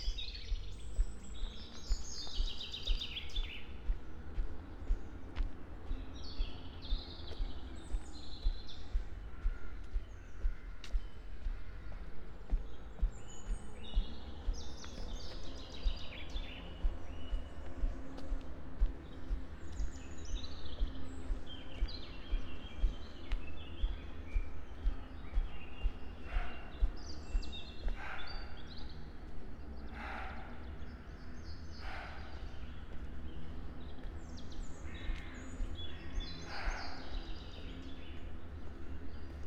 near small pond, Piramida, Maribor - walk for Diana and Actaeon
deer, crows, summer solstice morning